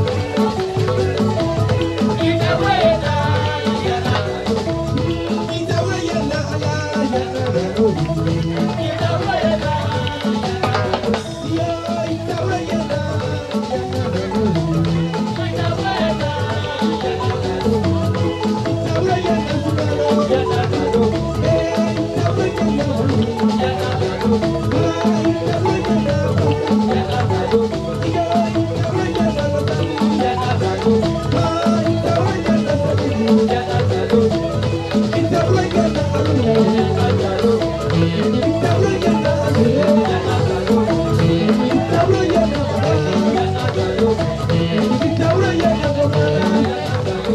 Argile Tour 1997, Bobo-Dioulassou